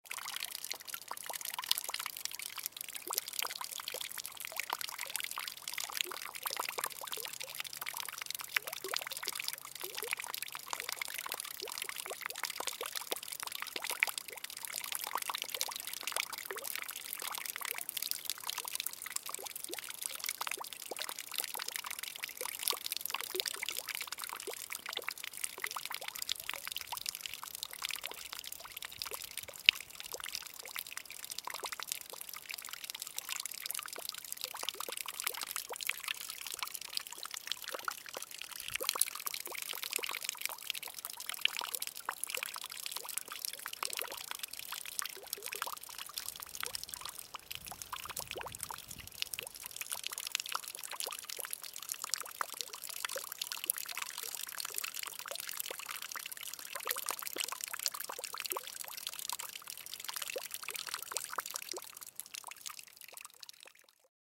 rain on roof and trees - water butt during rain
stafsäter recordings.
recorded july, 2008.
sweden